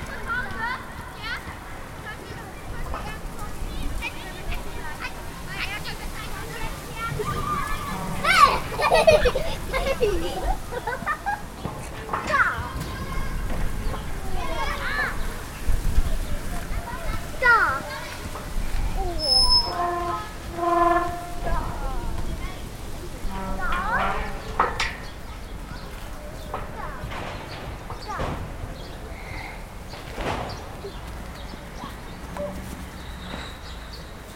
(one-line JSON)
{"title": "Kleine Hamburger Str., Berlin, Allemagne - Children", "date": "2019-02-28 15:06:00", "description": "Children playing at a soccer field, Zoom H6, MS microphone", "latitude": "52.53", "longitude": "13.40", "altitude": "37", "timezone": "Europe/Berlin"}